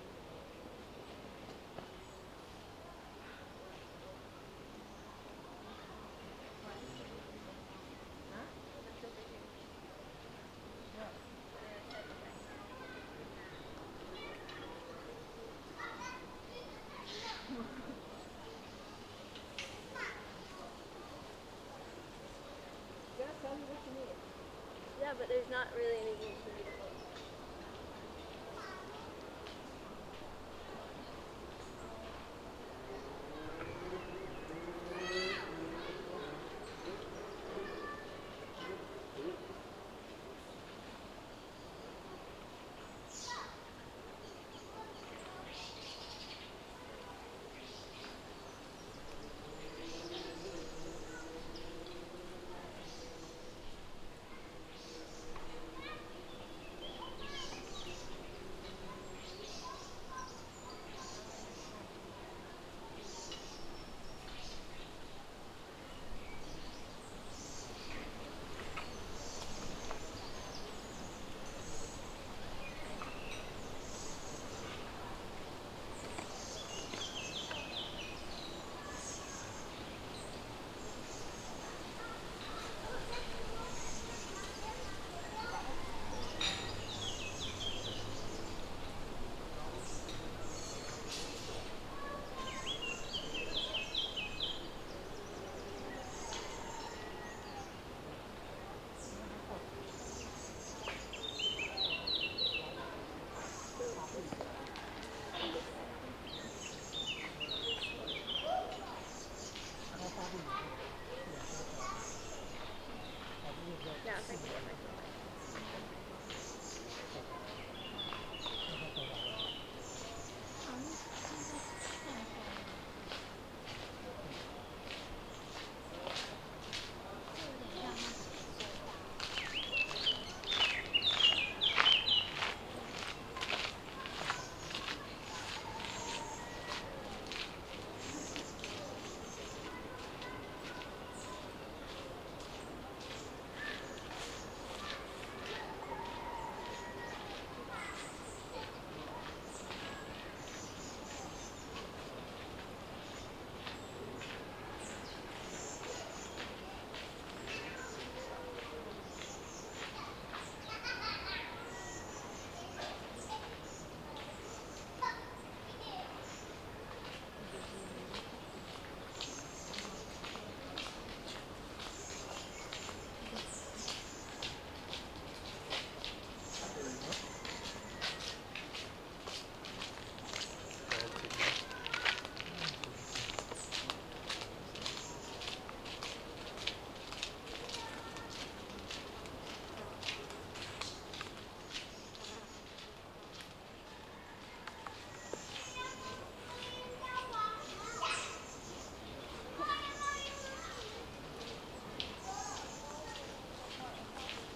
Serralves, Serralves Foundation-Porto, garden ambient
Serralves Foundation garden, porto, people talking, walking, birds, kids
Oporto, Portugal, 13 June 2011